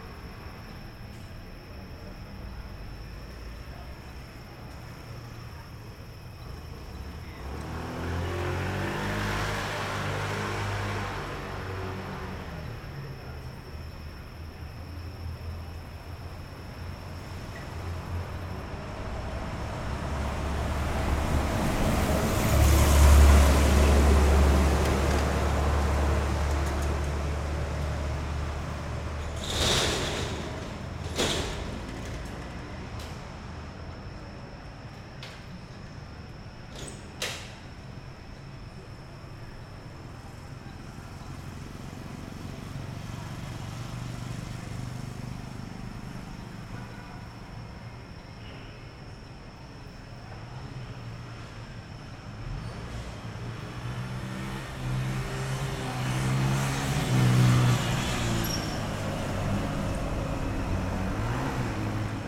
Cra., Medellín, Belén, Medellín, Antioquia, Colombia - Iglesia de Los Alpes
Toma de sonido / Paisaje sonoro de la parte lateral de la iglesia de Los Alpes a media noche, grabada con la grabadora Zoom H6 y el micrófono XY a 120° de apertura. Se puede apreciar al inicio de la grabación el intento de una persona de encender su motocicleta, el paso del metroplus y el pasar de las motocicletas por el lugar.
Grabado por: Andrés Mauricio Escobar
Sonido tónico: Naturaleza, grillos
Señal sonora: Motocicleta encendiendo y pasando.